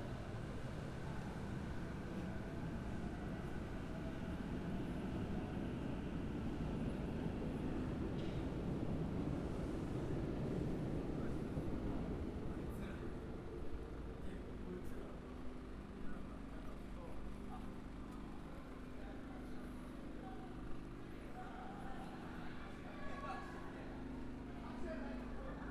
Japan, Tokyo, Suginami City, Kōenjiminami, 三井住友銀行高円寺ビル - Koenji at night
This recording was taken while strolling around Koenji in the evening.
22 April 2012, 14:28